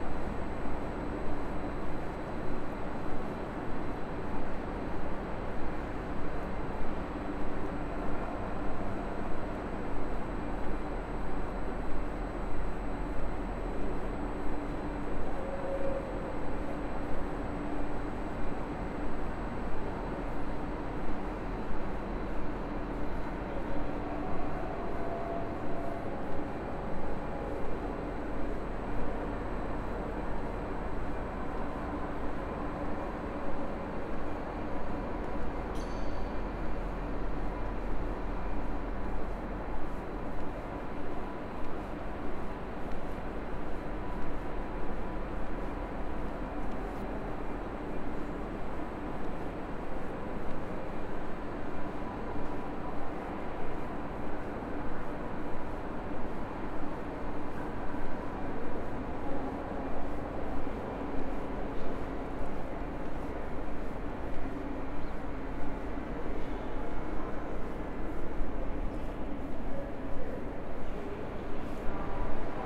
Frankfurt (Main) Hauptbahnhof, Gleiszugang - 27. März 2020 Gleiszugang
The station is not very busy. Some workers of Deutsche Bahn are discussing stuff, there seems not much to do. People pass by, a beggar is asking for money, trains are arriving and leaving. The pigeons are still there and people - but only once - run to catch a train. But again it is quite quiet.
Hessen, Deutschland, 27 March 2020